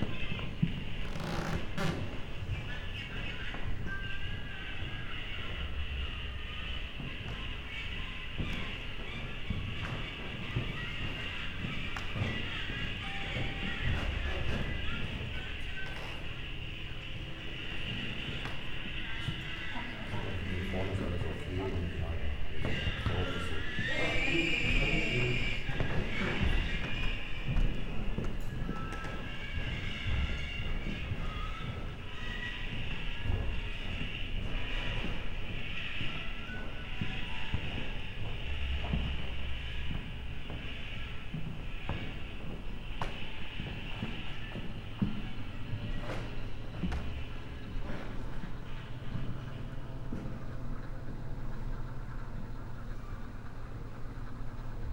Museen Dahlem, Berlin, Germany - steps hearer
Berlin, Deutschland, European Union, 19 May 2013, ~16:00